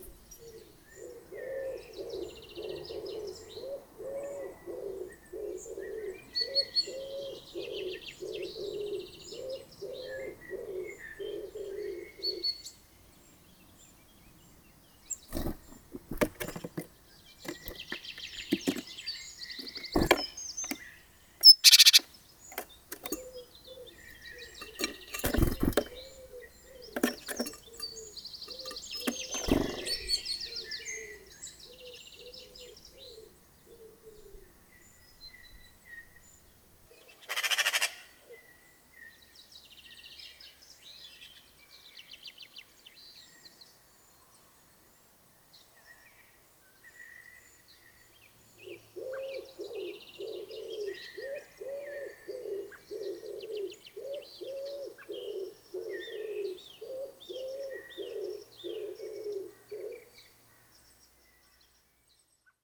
Court-St.-Étienne, Belgique - Dog drinking
Emeline put water in a fountain, in aim the birds can drink. Ten minuts after, there's only a small problem. Bingo the dog finds this very enjoyable, so he drinks everything. A few time after, a tit land on the recorder. A seed is taken and in a wings rustling, it goes back to the trees.